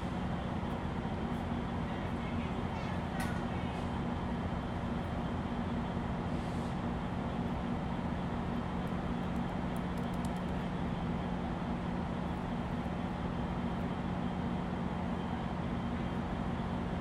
Chinatown, Los Angeles, Kalifornien, USA - LA - union statin, platform 2
LA - union station, platform 2, waiting for the metro gold line train;